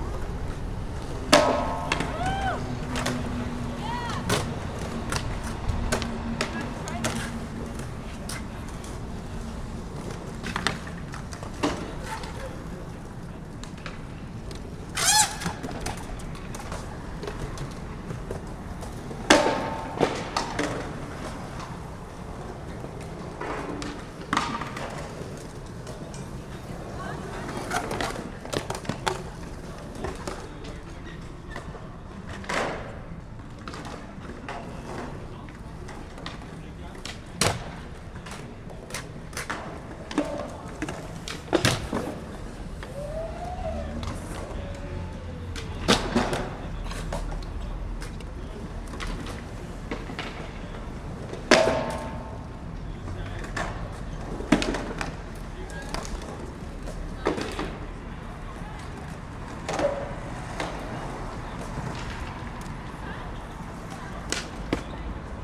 Rue Cloutier, Montréal, QC, Canada - Skatepark
Recorded with Clippy EM272 on zoome F2
Québec, Canada, 23 May 2022, 21:44